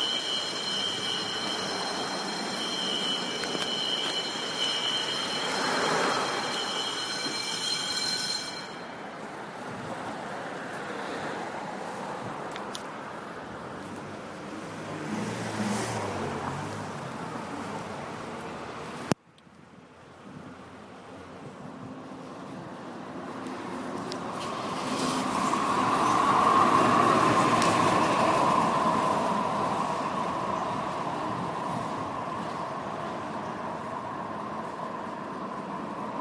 San Francisco Art Institute, San Francisco, CA, USA - Fire alarming in SFAI grad campus on 3rd st
SFAI grad center Building cried out loud with fire alarming. I couldn't say sorry to him, but rushed to take MUNI..